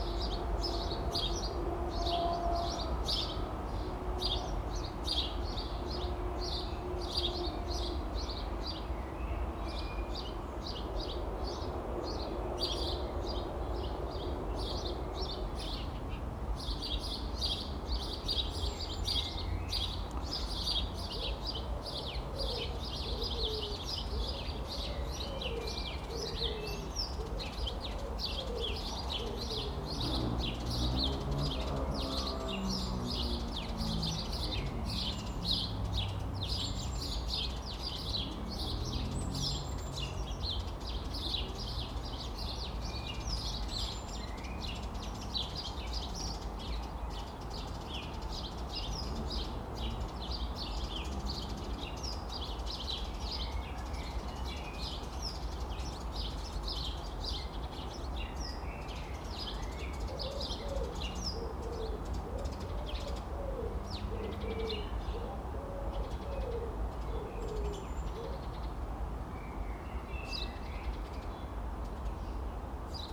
Friedenthal-Park, Berlin, Germany - Beside Werkstaettestrasse 9
Warm weather, Sunday atmosphere along the cobbled road leading to the DB rail yards. Some of these old works house have been renovated, some are derelict. Sparrows chirp, a wood pigeon calls.